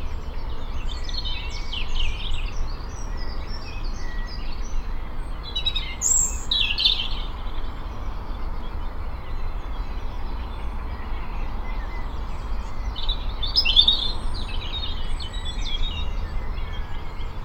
Blechhammer, Kędzierzyn-Koźle, Poland - Morning Birdsong in Worcestershire
This is a memorial to the men of BAB21 who lost their lives here in 1944 from bombing by the American Airforce. The men were all prisoners of war in this work camp and must have dreamed of England and sounds like these.